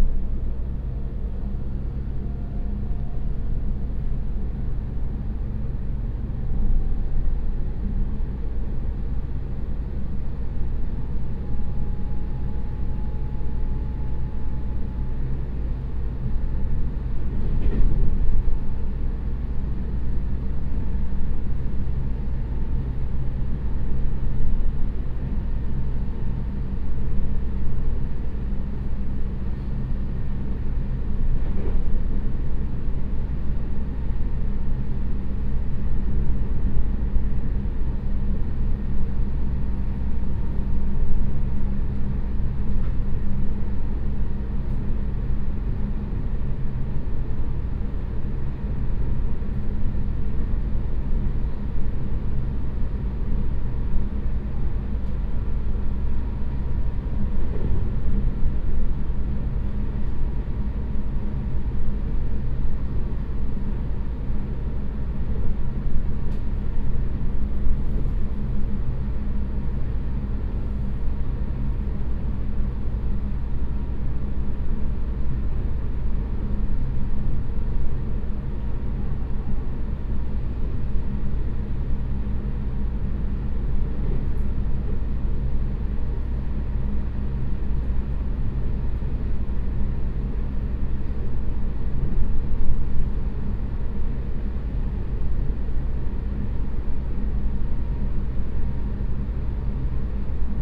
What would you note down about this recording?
In a railway carriage, from Linnei Station toShiliu Station